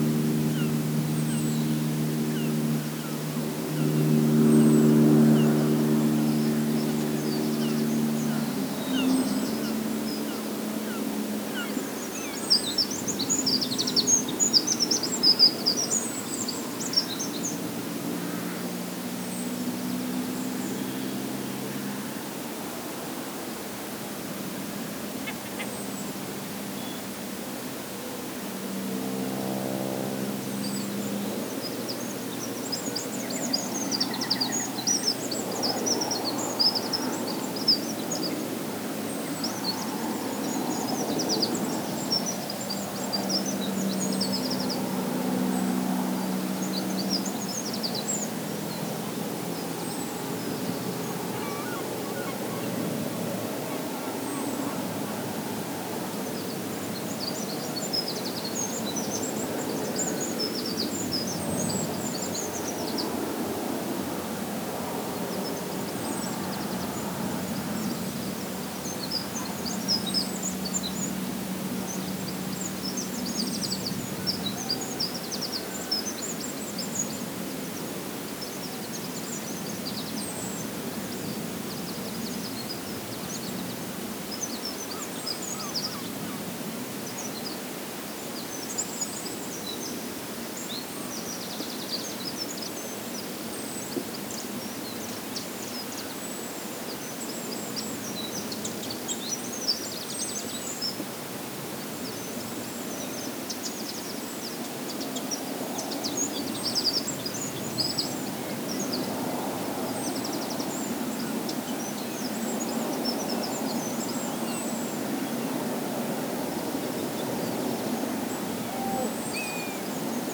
Weston Dairy Campsite, Worth Matravers, Swanage, UK - Early Sunday morning in a Dorset Field
Campsite at 5.17am on a Sunday. Everyone is asleep. There's some traffic/farm noises coming from somewhere but I can't work out where.
Tascam DR40, built-in mics, lo-pass on.
Levels were up quite high so a bit of noise has been introduced.